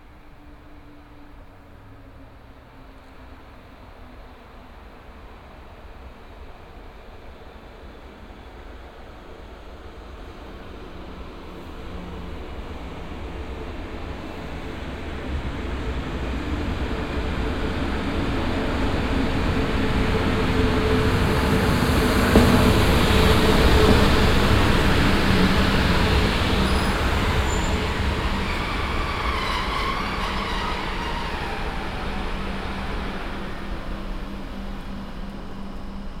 rudolstadt, station, regional train
At the station. A regional train destination Grossheringen arrives, stops with a nice pneumatic air pressure sound and departs again.
soundmap d - topographic field recordings and social ambiences
Rudolstadt, Germany, 6 October 2011, 16:42